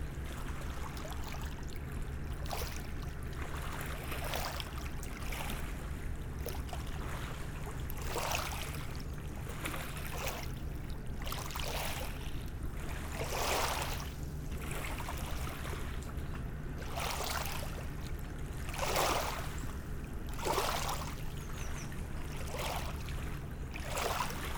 {"title": "Tosny, France - Boat", "date": "2016-09-21 15:30:00", "description": "The Amalegro tourist boat is passing by on the Seine river.", "latitude": "49.21", "longitude": "1.37", "altitude": "11", "timezone": "Europe/Paris"}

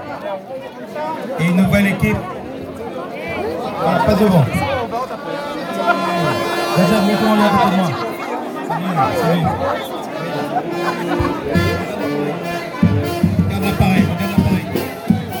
{"title": "Cilaos, Réunion - 20200705 15h10 discours du maire et orchestre wakiband", "date": "2020-07-05 15:10:00", "latitude": "-21.14", "longitude": "55.47", "altitude": "1210", "timezone": "Indian/Reunion"}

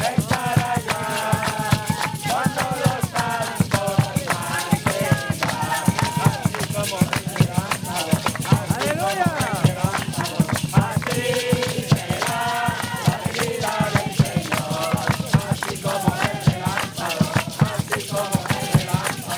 {"title": "Malecón Maldonado, Iquitos, Peru - thank you Jesus", "date": "2001-02-17 19:30:00", "description": "Youth with a mission singing for Jesus", "latitude": "-3.75", "longitude": "-73.24", "altitude": "102", "timezone": "America/Lima"}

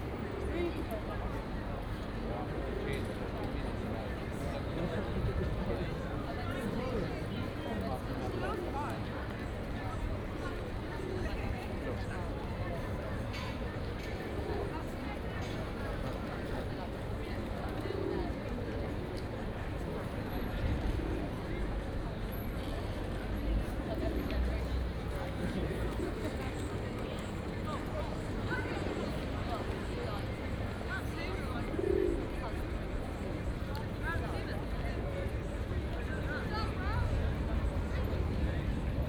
{"title": "Bonn Square, Oxford, UK - square ambience", "date": "2014-03-14 14:30:00", "description": "having a rest at Bonn Square, Oxford. quite some people had the same idea too. deep hum of a bus waiting nearby.\n(Sony PCM D50, OKM2)", "latitude": "51.75", "longitude": "-1.26", "altitude": "73", "timezone": "Europe/London"}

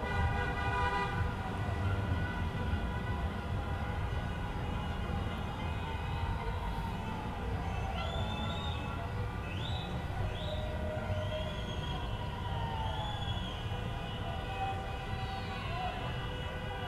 {"title": "Rue des Glycines, Réunion - 20140330 2127", "date": "2014-03-30 21:27:00", "description": "Le maire a été élu.\nPour son élection c'est la liesse, et l'occasion de faire un maximum de bruit. Le défilé de voitures avait tant fait d'oxydes d'azotes que le laindemain encore, l'air s'était chargé en ozone, c'était aussi difficile de respirer que si on était dans le massif de la vanoise en métropole l'année 2003 où les valeurs d'ozones peuvent grimper à plus de 300 microgramme par mètres cubes.", "latitude": "-21.14", "longitude": "55.47", "altitude": "1211", "timezone": "Indian/Reunion"}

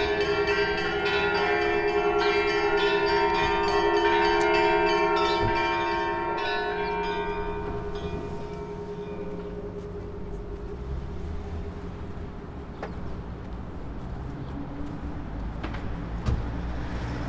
{"title": "ул. Короленко, Санкт-Петербург, Россия - Bell ringing, building and road", "date": "2019-05-02 12:20:00", "description": "zoom h 4", "latitude": "59.94", "longitude": "30.35", "altitude": "16", "timezone": "GMT+1"}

